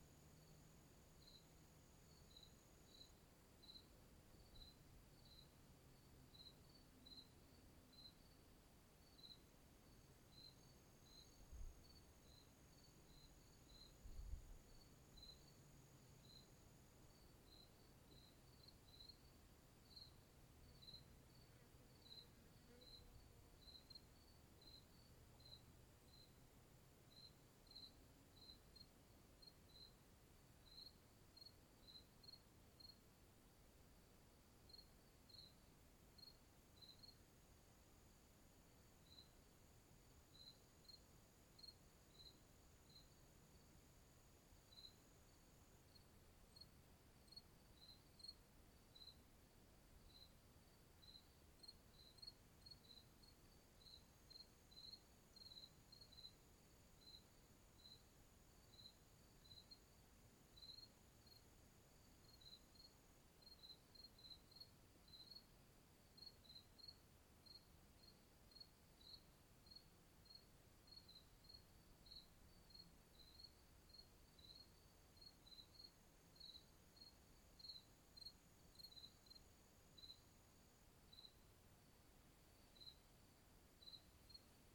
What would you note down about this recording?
Recording near where the Council Bluff Trail crosses a shut-in tributary of the Black River